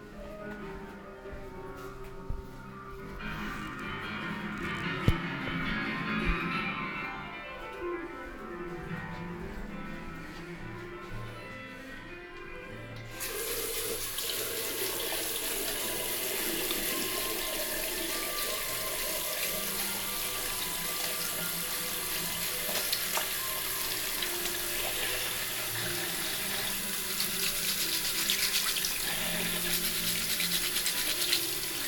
Ascolto il tuo cuore, città. I listen to your heart, city. Several chapters **SCROLL DOWN FOR ALL RECORDINGS** - Round Noon bells on September 8th, Tuesday in the time of COVID19 Soundwalk
"Round Noon bells on September 8th, Tuesday in the time of COVID19" Soundwalk
Chapter CXXX of Ascolto il tuo cuore, città. I listen to your heart, city
Tuesday, September 8st, 2020, San Salvario district Turin, walking to Corso Vittorio Emanuele II and back, five months and twenty-nine days after the first soundwalk (March 10th) during the night of closure by the law of all the public places due to the epidemic of COVID19.
Start at 11:51 a.m. end at 00:17 p.m. duration of recording 25’46”
The entire path is associated with a synchronized GPS track recorded in the (kmz, kml, gpx) files downloadable here: